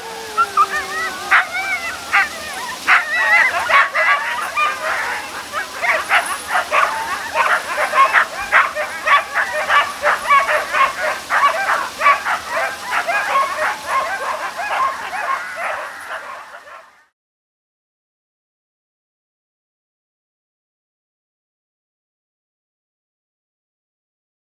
Sled dogs along the Dranse River 1945 Liddes, Suisse - Sled dogs ready to go
Recording near a little river over the bridge. Approx 10 dogs ready to tow a sleg. Its cold and the snow is on the pine and the flor. We can hear the small river.
Recording with zoom H1n and proced.